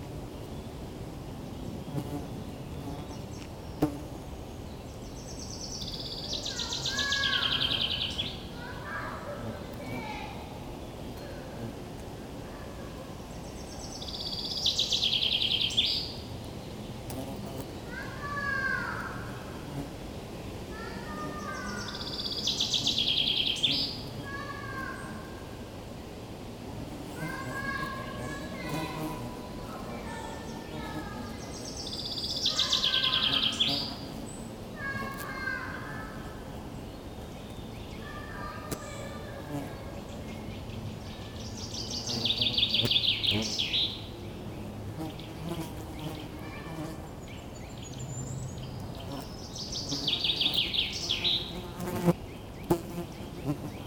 Ottignies-Louvain-la-Neuve, Belgique - One hour in the crazy life of a dung
Process is simple. I was walking in the Lauzelle forest. I found the place uninteresting mainly because of the quite crowded people here, and also the motorway far distant noise. But, wind in the trees was beautiful. I encontered an horse and... a big dung fall onto the ground. The flies went immedialtly on it. I put the two microphones into the hot poop and all was made, that's all I can say. It's like that, on a hot and lazy public holiday, walkers saw a stupid guy recording a dung during an hour !